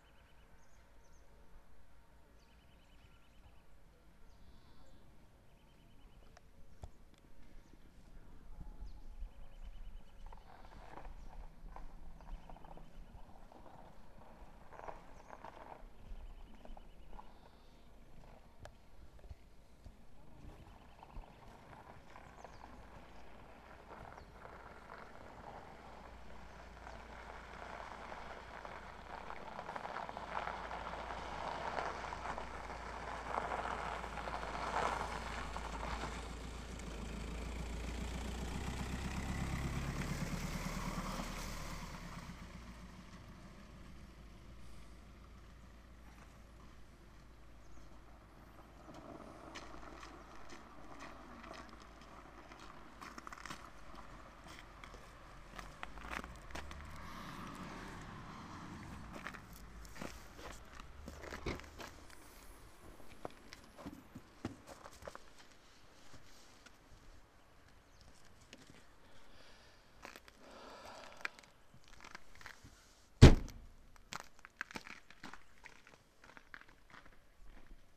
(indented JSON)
{
  "title": "Połczyn-Zdrój, Polen - parking lot",
  "date": "2013-03-31 10:00:00",
  "description": "right before leaving Polcyn, spring birds mixed beautifully with human voices & passing cars on the still frozen over parking lot",
  "latitude": "53.76",
  "longitude": "16.09",
  "altitude": "106",
  "timezone": "Europe/Warsaw"
}